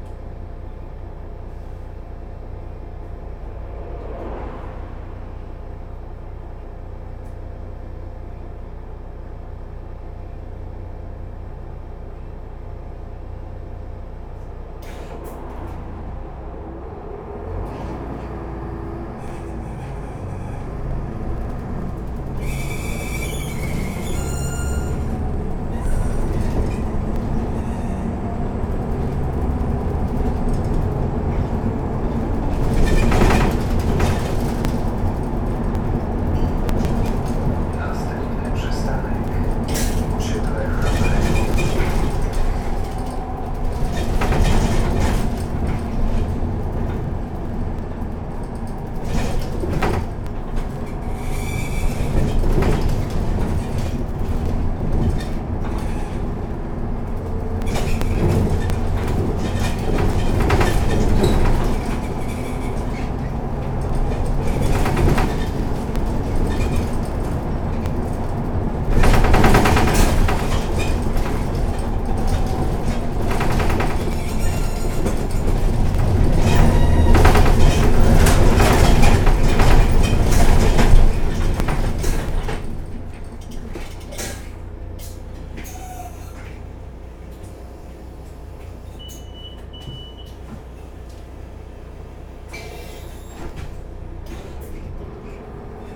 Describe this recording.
ride on a bus line 90. the bus as pretty long and had a bending part in the middle that allows it to take narrow curves. this part of the bus was very squeaky. conversations of the few commuters. usual sounds on the bus, announcer naming the stops, rumble, door beep. (roland r-07 internal mics)